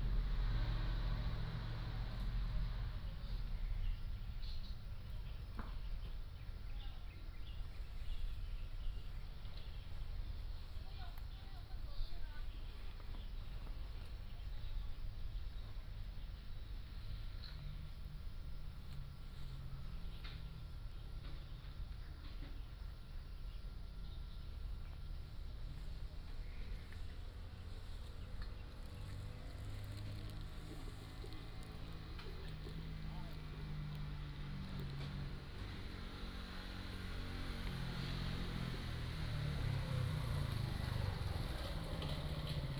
Quiet little station, Birdsong Traffic Sound